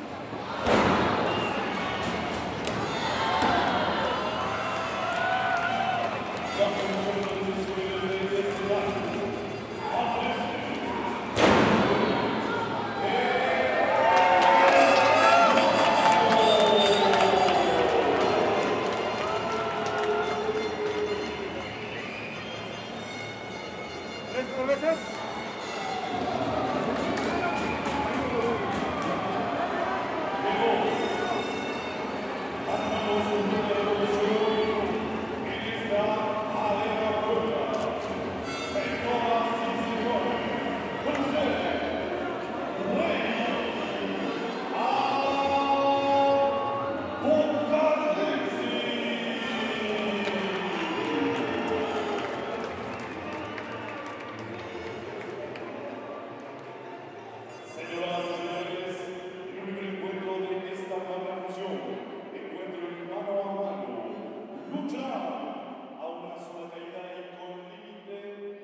Av. 13 Ote., El Carmen, Puebla, Pue., Mexique - Puebla Arena - Lucha Libre
Puebla - Mexique
Puebla Arena
Une soirée Lucha Libre
ZOOM H6
Puebla, México